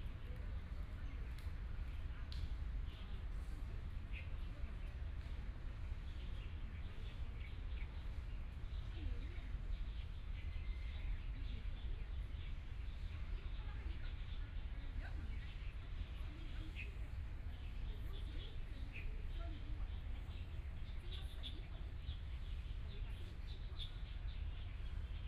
Yangpu Park, Yangpu District - Birds sound
Sitting under a tree, Birds singing, Binaural recording, Zoom H6+ Soundman OKM II